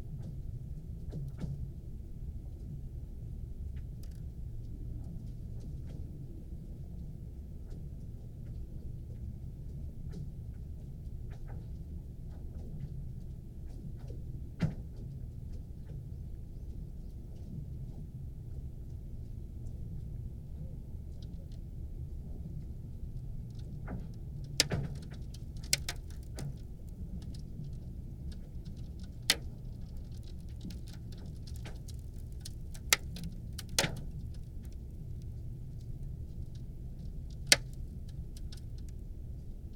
Chuncheon Lake Ice Formation, Gangwon-do, South Korea - Chuncheon Lake Ice Formation
Chuncheon Lake Ice Formation. When the temperature suddenly fell in late January the Chuncheon Lake froze over entirely. The ice rapidly became thick enough for people to walk onto and start skating or ice fishing. Over the first few days the ice was forming rapidly and some incredible acoustic phenomena from the heaving and splitting of ice sheets could be heard echoing around the lake basin area.
23 January 2016, 13:00